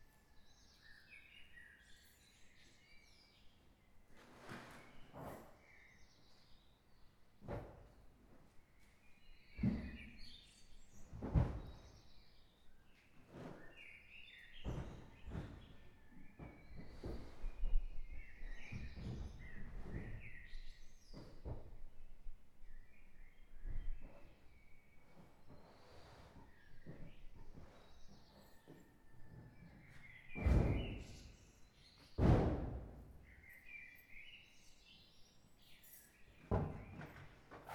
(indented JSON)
{
  "title": "Borgofranco dIvrea, Metropolitan City of Turin, Italy - Borgofranco d Ivrea Summer Waking up",
  "date": "2019-07-11",
  "description": "Borgofranco d Ivrea Waking up, half hour at 5am (church bells on 1´50´´)\nVillage and fauna increasing sound entrophy of a summer morning\ncontaining Birds, bells, newspaper delivery, etc....\nH1 zoom + wind shield, inner court, place on the ground",
  "latitude": "45.51",
  "longitude": "7.86",
  "altitude": "258",
  "timezone": "Europe/Rome"
}